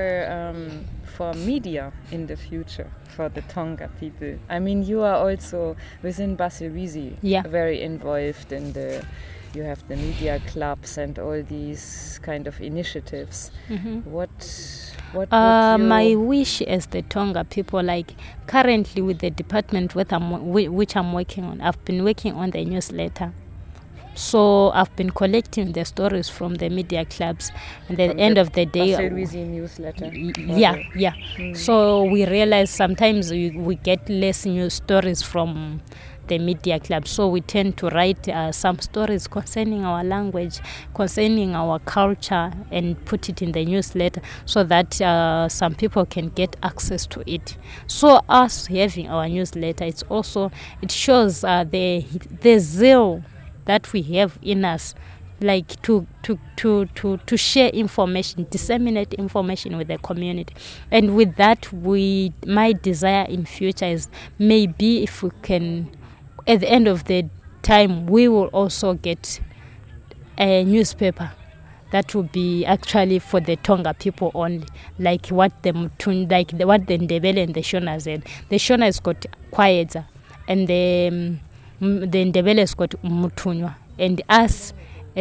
At the time of this interview, Linda is working as a National Volunteer with the Basilwizi Trust and she tells here about her work with the Media Clubs based in local schools and Basilwizi’s newsletter. Linda wants to become a journalist, to gain the skills and position of representing the Tonga people and their culture in Zimbabwe and beyond. Her vision is to establish a newspaper in ChiTonga….
The entire interview with Linda is archived here:
Zimbabwe, November 2012